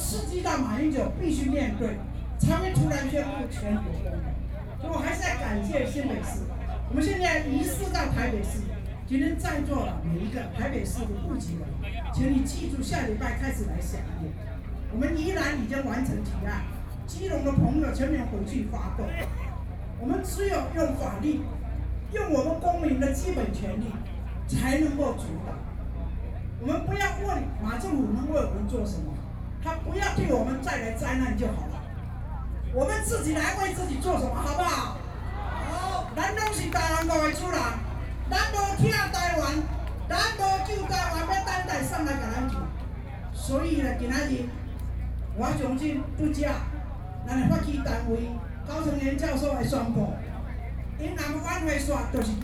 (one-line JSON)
{"title": "Ketagalan Boulevard, Taipei - speech", "date": "2013-05-19 17:56:00", "description": "anti-nuclear protesters, Former Vice President speech, Sony PCM D50 + Soundman OKM II", "latitude": "25.04", "longitude": "121.52", "altitude": "11", "timezone": "Asia/Taipei"}